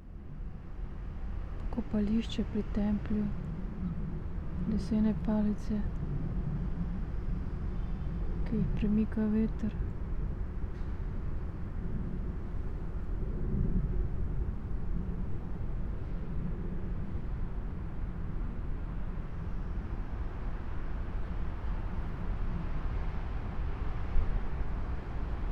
cemetery, shirakawa, tokyo - wooden sticks, moved by wind